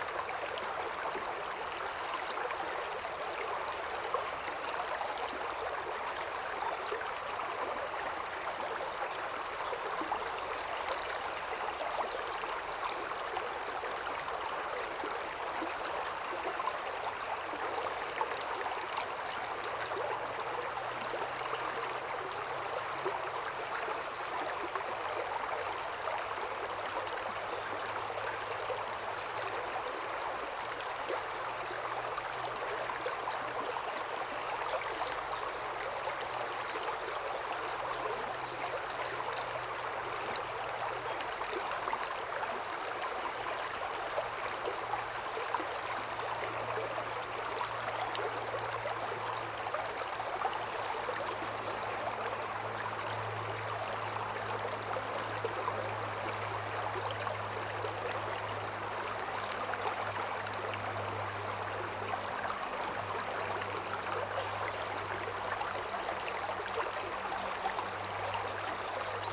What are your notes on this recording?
The sound of water streams, Zoom H2n MS+XY